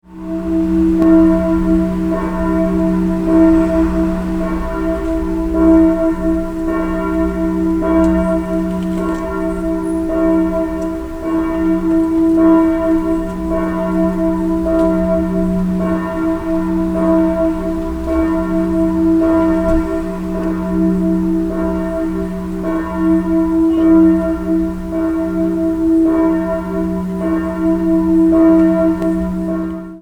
REWE Parkplatz, Essen, Deutschland, Glockengeläut, St. Hubertus
Essen, Germany, 11 August, 14:55